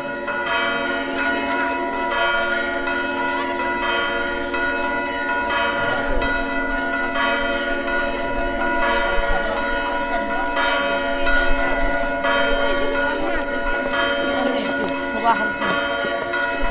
Church of Nativity, Bethlehem - Bells and talks
In this very special place, they say Jesus was born. While bells are running, people are chitchatting and birds are eating what tourists left behind. (Recorded with Zoom4HN)